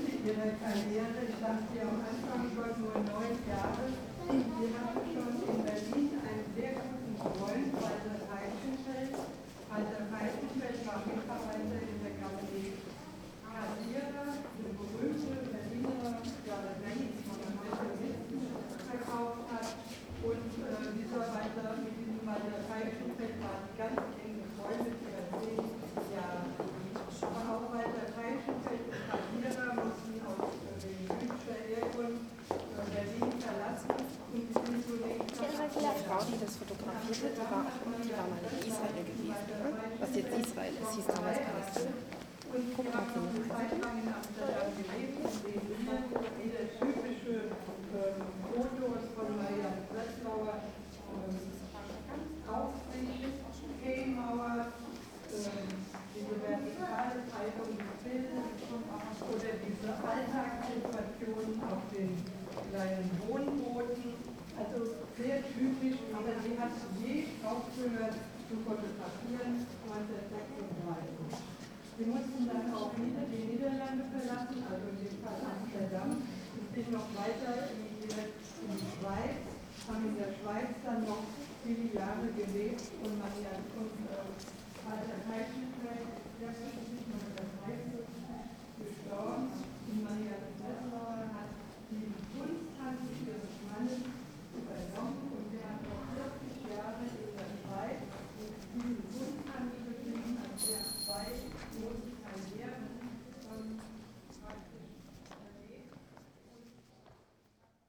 a walk through the berlinische galerie (with guided tour to an exhibition of marianne breslauer in the background)
the city, the country & me: october 31, 2010